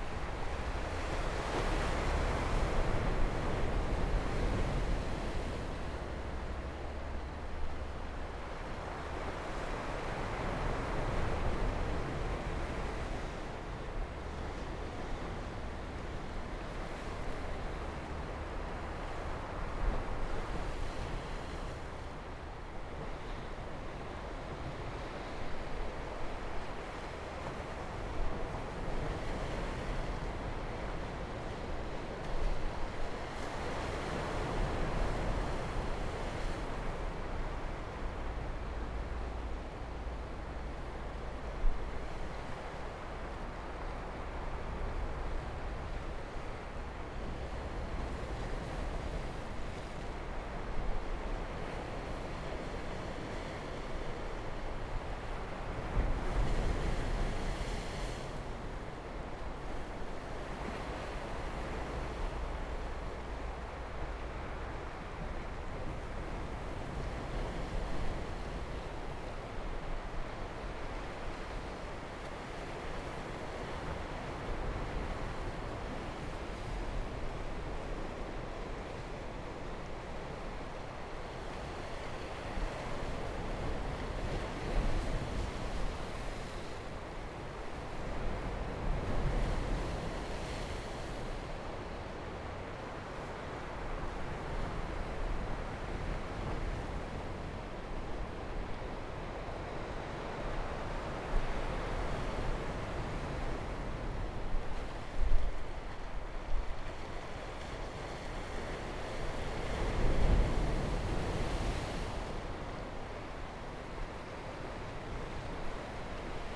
Hualien, Taiwan - Sound of the waves
Sound of the waves, Cloudy day, Zoom H4n +Rode NT4+ Soundman OKM II
5 November 2013, 13:16